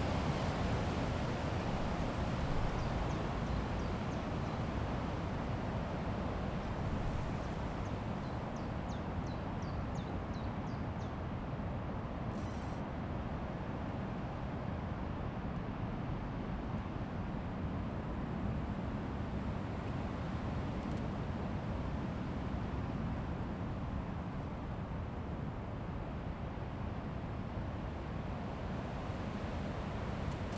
Fläsch, Schweiz - Wind Baum Föhre
Ein kalte Wind blähst durch eine Baumgruppe.
November 1998